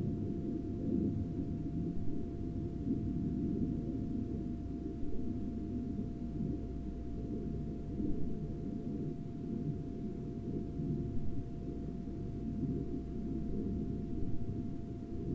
neoscenes: more F/A-18s circling
UT, USA, 4 May 2010, ~18:00